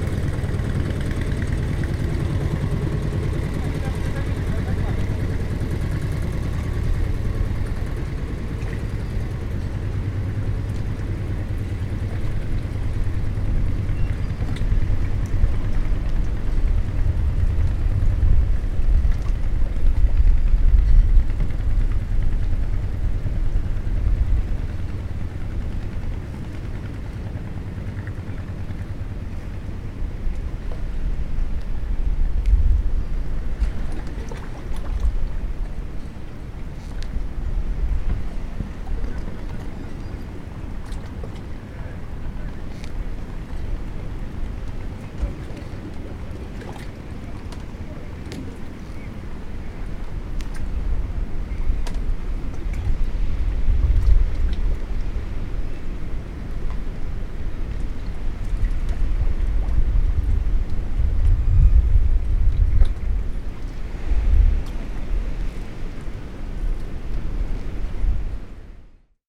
Marina Porto - Tricase (Lecce) - Italia - Banchina Porto di Sera
After the dusk...wind, the reverberating sound of the sea, and a fisherman come back...
Marina Porto LE, Italy